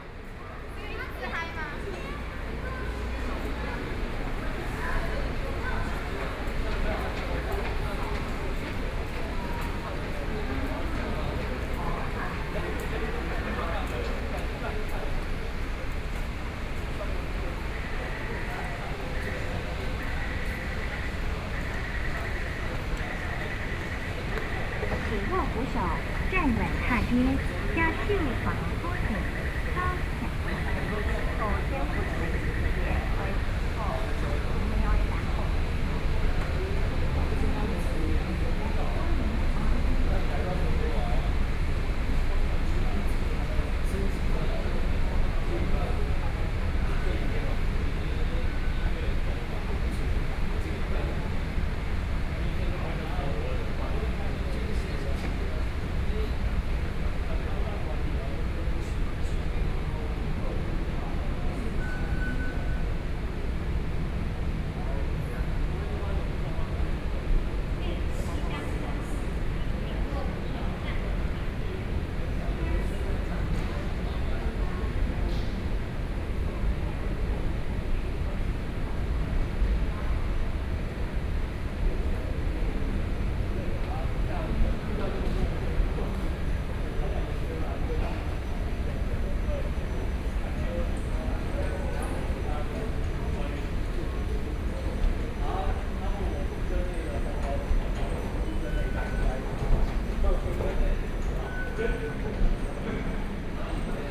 Taipei Nangang Exhibition Center Station - soundwalk
Train Ride, walking in the station, Binaural recordings, Sony PCM D50 + Soundman OKM II